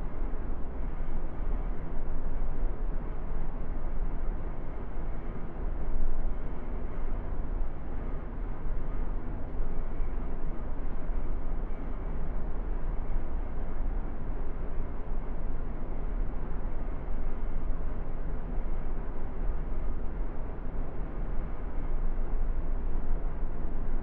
Inside a small private chapel that is owned by Carl Salm Bestattungen. The sound of the room ventilation varying silenty in the empty candle lighted chapel with a decorated coffin.
This recording is part of the intermedia sound art exhibition project - sonic states
soundmap nrw - topographic field recordings, social ambiences and art places
Düsseldorf, Germany, 24 January 2013, 10:40